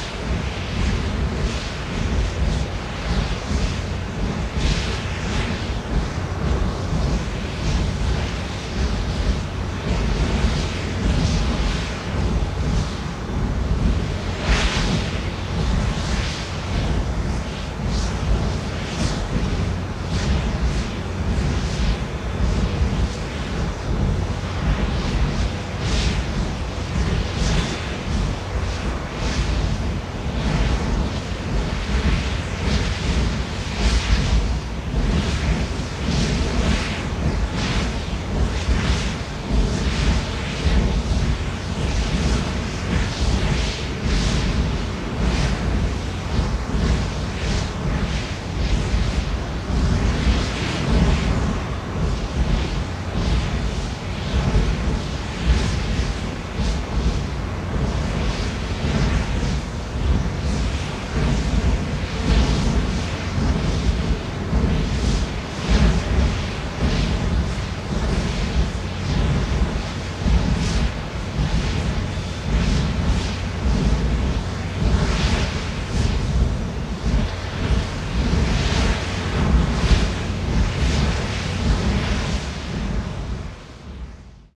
Litvínov, Czech Republic - Gas flares in the wind, Unipetrol, Litvinov
Totally surrounded by kilometers of gleaming pipes, towers and storage tanks.